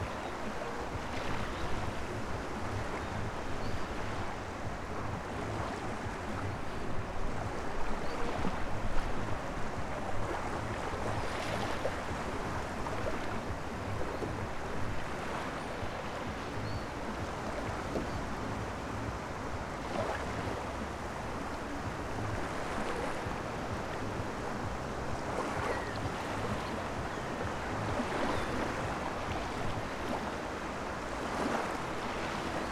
Latvia, Jurmala beach
wind, waves, gulls, distant dj music in the cafe